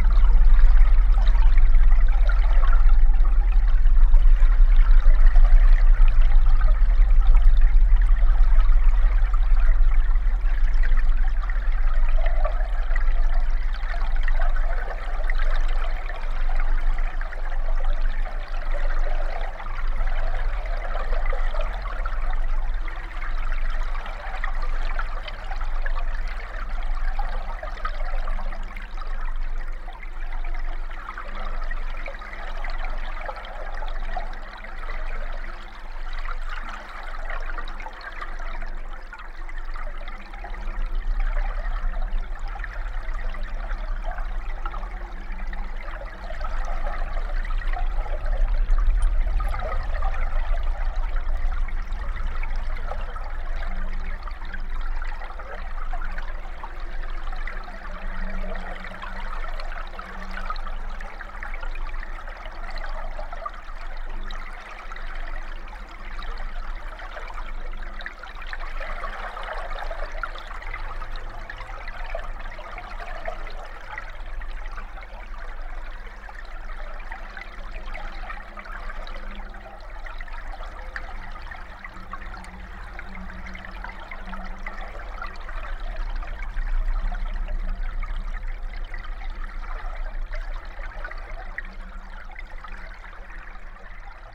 Atkočiškės, Lithuania, flooded
spring, flood. hydrophone in river and geophone on metallic structure above
Utenos apskritis, Lietuva, 28 February 2021